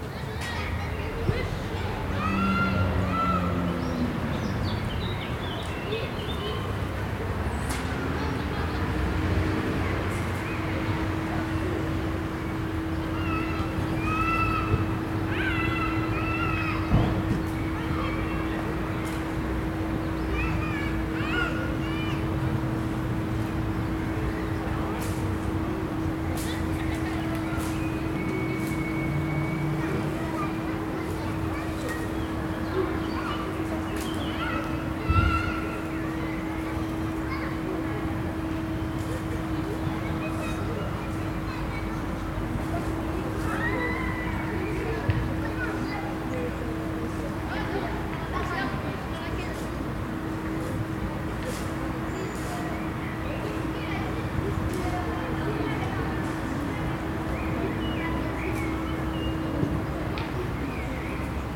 ambience of the park
captation : ZOOM H4n
15 April 2022, 16:00